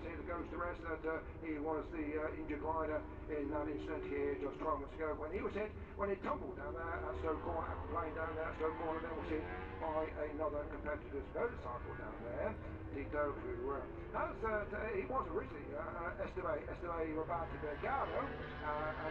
Silverstone Circuit, Towcester, UK - british motorcycle grand prix 2019 ... moto grand prix ... q1 ...
british motor cycle grand prix 2019 ... moto grand prix qualifying one ... and commentary ... copse corner ... lavalier mics clipped to sandwich box ...